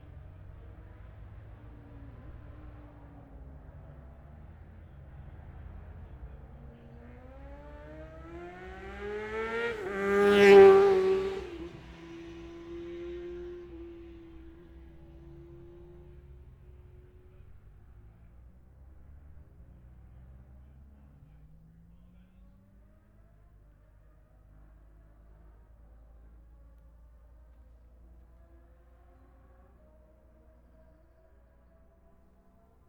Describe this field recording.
bob smith spring cup ... newcomers ... luhd pm-01 mics to zoom h5 ...